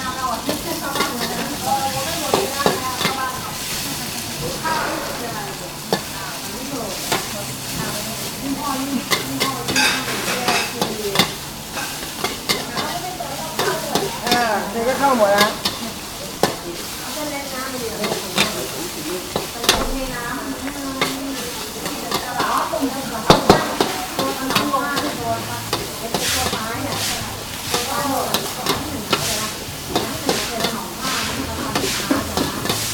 Cooking Khao Pat
WLD, Bangkok, Thailand, cooking, market, food
Khlong Toei, Bangkok, Thailand, July 2010